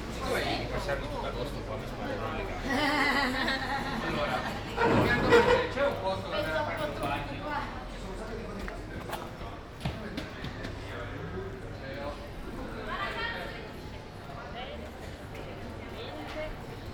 Ascolto il tuo cuore, città. I listen to your heart, city. Several Chapters **SCROLL DOWN FOR ALL RECORDINGS - “La flânerie après quatre mois aux temps du COVID19”: Soundwalk
“La flânerie après quatre mois aux temps du COVID19”: Soundwalk
Chapter CXVI of Ascolto il tuo cuore, città. I listen to your heart, city
Friday, July 10th, 2020. Walking in the movida district of San Salvario, Turin; four months after the first soundwalk during the night of closure by the law of all the public places (at 6 p.m.: March, 10th) due to the epidemic of COVID19.
Start at 10:21 p.m., end at h. 10:59 p.m. duration of recording 38’19''
As binaural recording is suggested headphones listening.
The entire path is associated with a synchronized GPS track recorded in the (kml, gpx, kmz) files downloadable here:
Go to Chapter I, March 10th start at 7:31 p.m., end at h. 8:13 p.m. duration of recording 40'45''. Different hour but same sun-time as on March 10th sunset was at 6:27 p.m., today, July 10th is at 9:17 p.m.